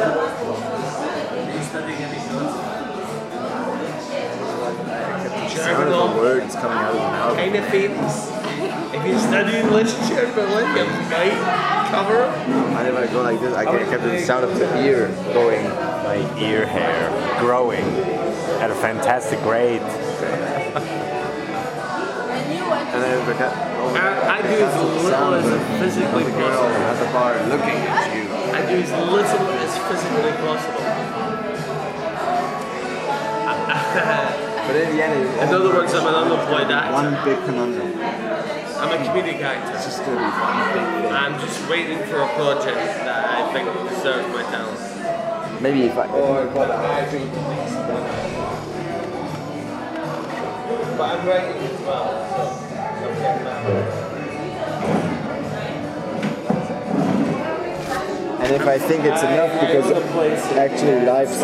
Explaining the LS 5 to a friend in a pub in Prague
How many are the fragments that constitute a life in recordings? My friend, a painter, mainly concerned with visual memories, wants to know what the little furry thing in my hand is good for...